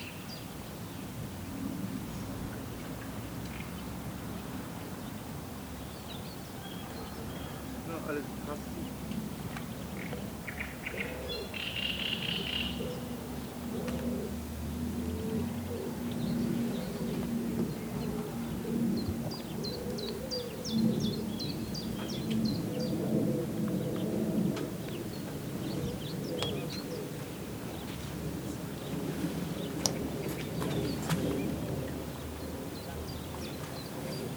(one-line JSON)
{"title": "Kirchstraße, Teupitz, Deutschland - Seebrücke Teupitz", "date": "2019-05-05 18:30:00", "description": "Seebrücke Teupitz Zoom H4n / ProTools", "latitude": "52.14", "longitude": "13.61", "altitude": "40", "timezone": "Europe/Berlin"}